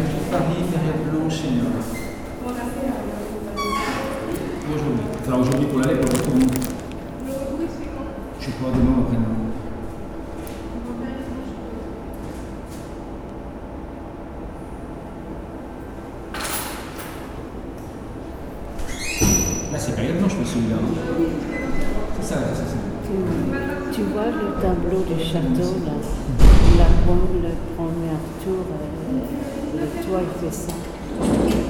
Maintenon, France - Maintenon station
In the wide hall of the Maintenon station. It's a small city but huge train center, as it's quite near from Paris. The main door creaks since 30 years !
29 July 2016, ~19:00